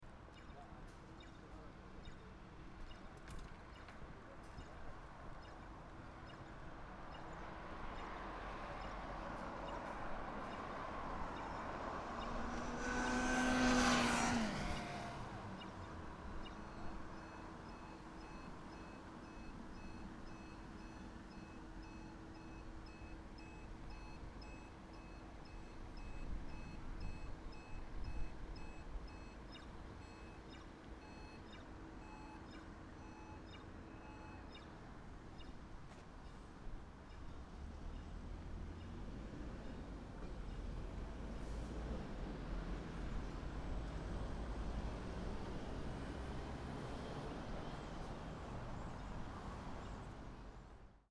Riga, Latvia - Traffic Sound Signs